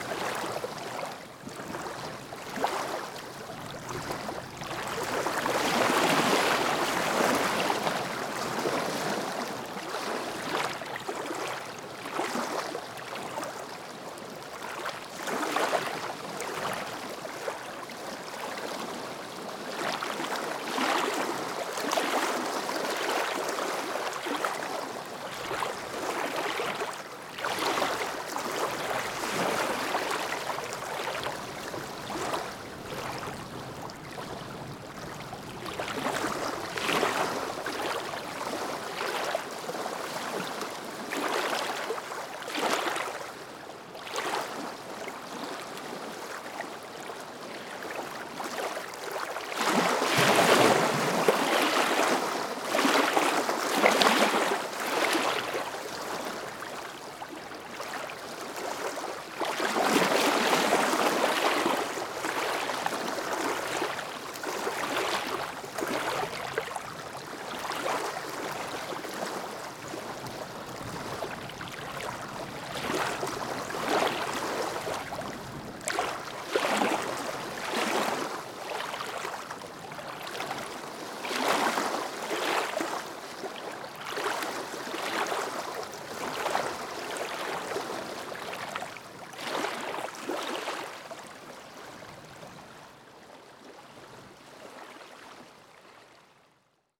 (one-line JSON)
{"title": "Lac Butgenbach, Belgique - Small waves on the lake", "date": "2022-01-05 11:20:00", "description": "Windy snowy day.\nTech Note : Sony PCM-D100 internal microphones, wide position.", "latitude": "50.43", "longitude": "6.24", "altitude": "553", "timezone": "Europe/Brussels"}